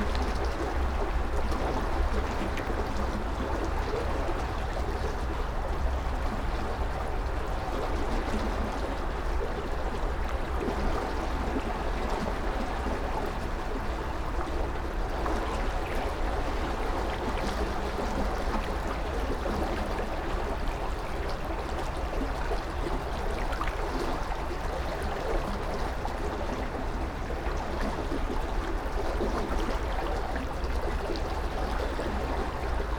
canal, Drava river, Zrkovci, Slovenia - small bridge
Maribor, Slovenia